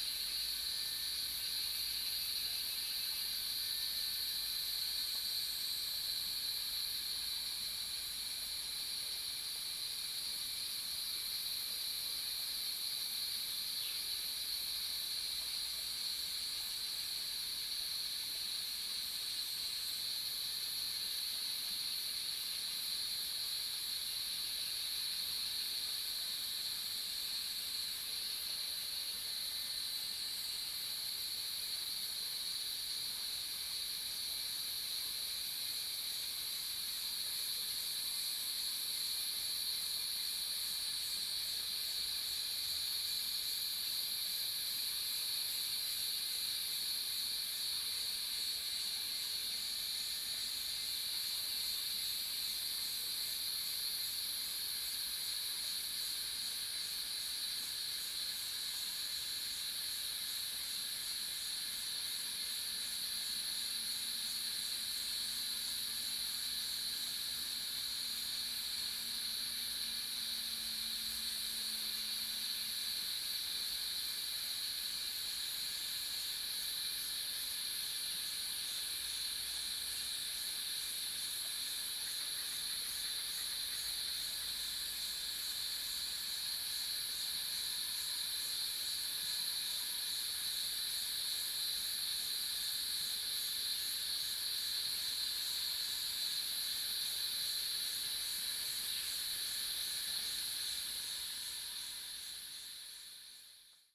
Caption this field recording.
Cicada sounds, Bird sounds, For woods, traffic sound, Zoom H2n MS+XY